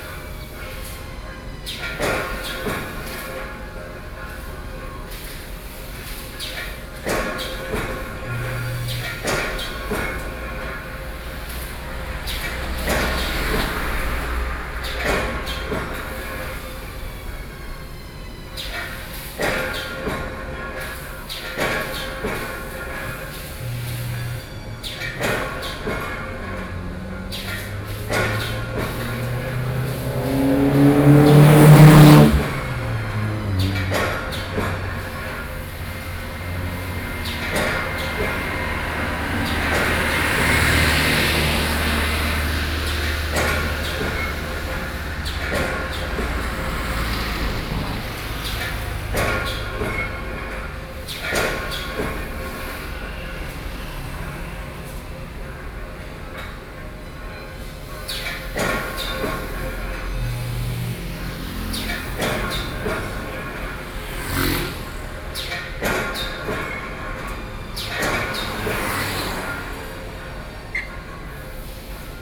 Section, Língyún Rd, Wugu District, New Taipei City - Industrial Zone
The factory mechanical operation of the sound and traffic noise, Binaural recordings